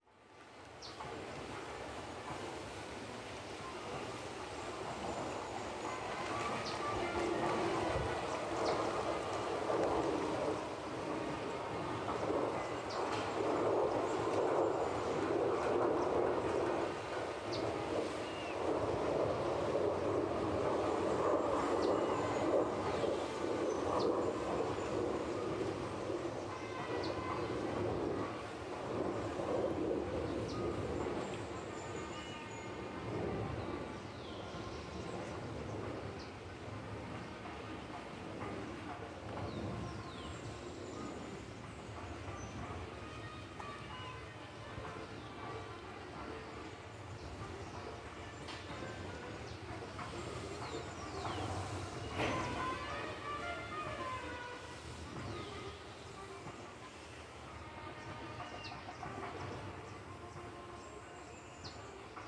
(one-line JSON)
{"title": "Parque De La Sal, Zipaquirá, Cundinamarca, Colombia - Mine of the Salt Cathedral of Zipaquirá - Outside", "date": "2021-05-22 13:00:00", "description": "In this audio you will hear the outside of the mine of the Salt Cathedral of Zipaquirá. This point is the tourist area and meeting point of all visitors, where you can carry out various activities, on site you can hear the wind breeze widing the trees and singing various species of birds, people carrying out activities and in the background the practice of an orchestra indigenous to the country.", "latitude": "5.02", "longitude": "-74.01", "altitude": "2671", "timezone": "America/Bogota"}